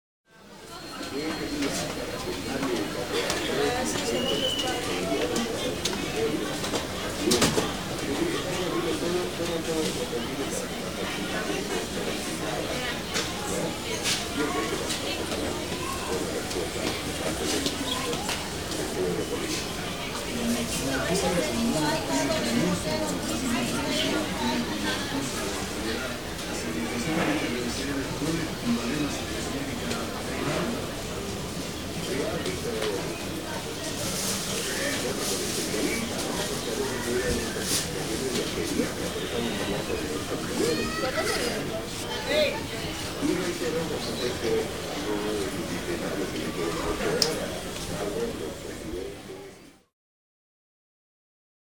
Mercado Lanza - antiguo mercado lanza
registrado por: Bernarda Villagomez
La Paz, Bolivia